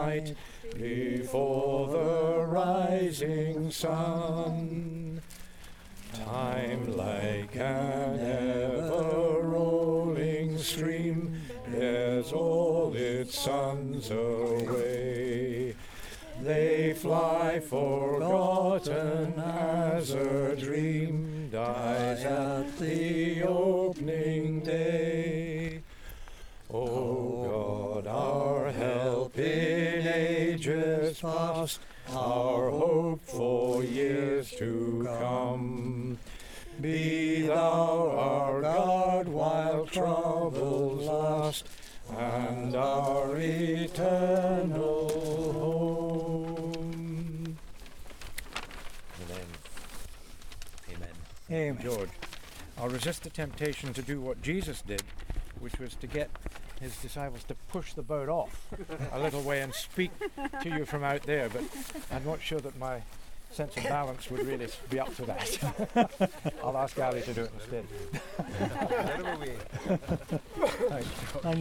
Paxton, Scottish Borders, UK - River Sounds - Blessing of the Nets, Paxton
Field recording of the traditional Blessing of the Nets ceremony that took place on the banks of the Tweed at Paxton on May 1st 2013.
The Paxton netting station is one of the very few fisheries still operating this traditional net and cobble method of salmon and trout fishing.
The first catch that evening was of two large and one smaller sea trout. The first fish is always for the Minister and this was gutted and cleaned on the river side by George Purvis.
Thanks to the Minister Bill Landale, for permitting this recording and to Martha Andrews, Paxton House, for inviting us along.
1 May 2013, ~18:00